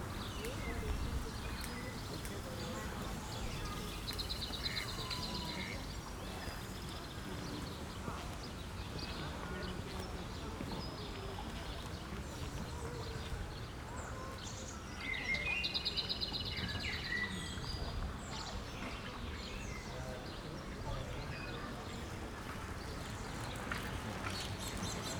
Karl-Heine-Kanal, Leipzig, Germany - canal ambience
ambience at Karl-Heine-Kanal, Lindenau, Leipzig
(Sony PCM D50, DPA4060)